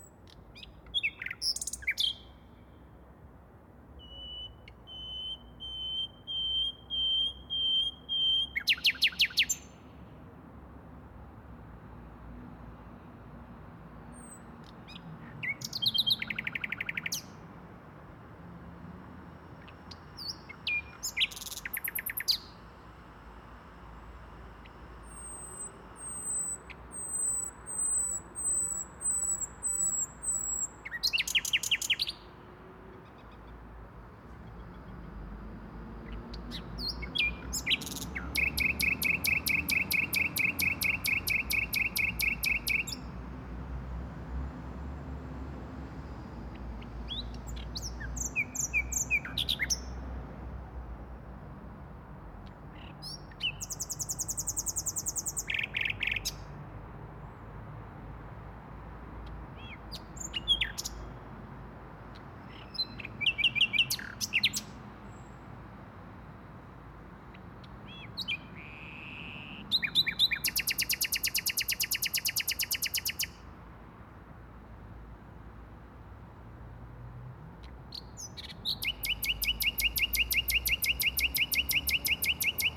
Waldeckpark, Berlin, Germany - Nightingale in full voice
Berlin's nightingales are a joy to hear when coming home at night. Their songs from the dark interiors of parks, cemeteries, railway edges and playground bushes, are crystal clear even from a distance and they don't seem to mind if you approach more closely to listen. During late April and early May they are in full voice, particularly on warm nights.